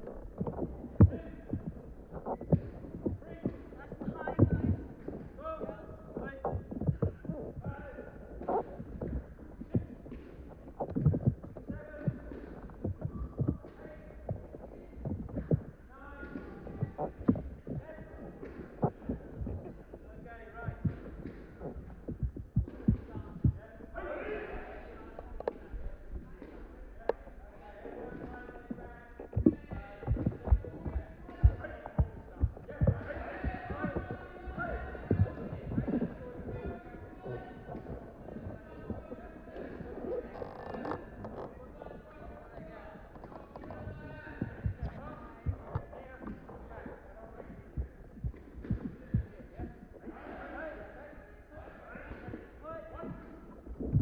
Bradfield, Reading, West Berkshire, UK - Karate Grading (Contacts)
Pair of contact microphones picking up the thuds, squeaks and call and response shouts of those undertaking their karate gradings at Bradfield College. This section was recorded during the set-piece 'katas' following the instruction of the teacher or 'Sensei'. Recorded using a Tascam DR-680 MKII and JRF Audio contact microphones.
1 November 2015, 11:30